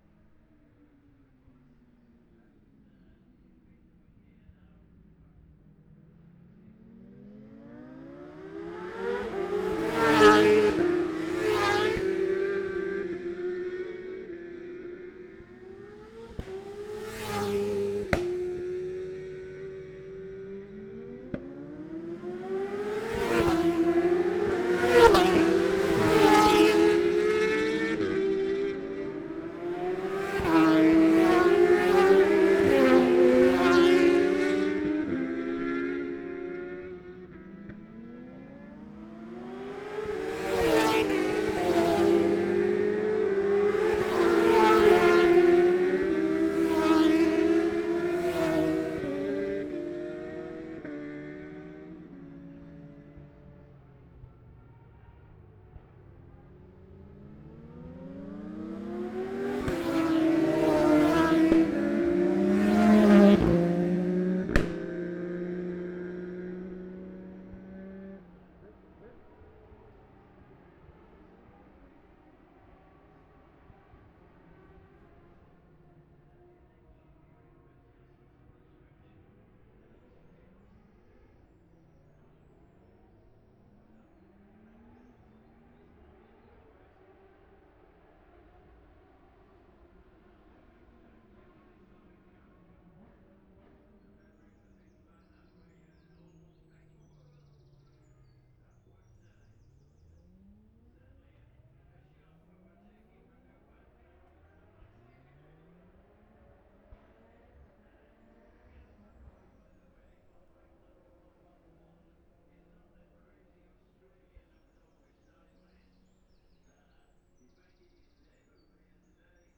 Jacksons Ln, Scarborough, UK - olivers mount road racing ... 2021 ...
bob smith spring cup ... 600cc group B practice ... luhd pm-01 mics to zoom h5 ...